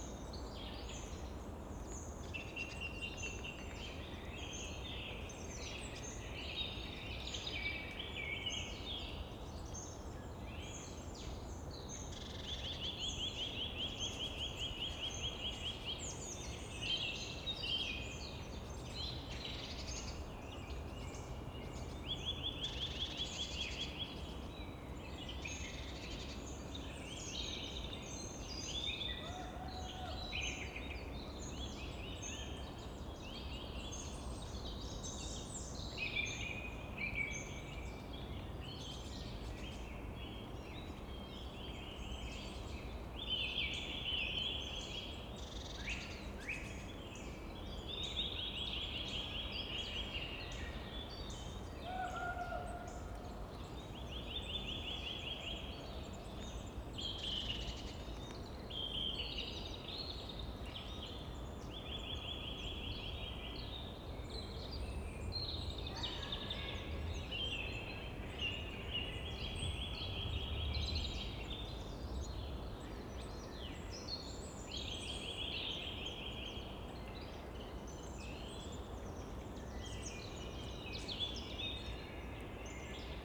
source of the river Wuhle, and also a start of a project about this river, which flows 15km through Berlin until it runs into the river Spree.
(SD702, AT BP4025)
Ahrensfelde, Germany, 28 March 2015, 15:10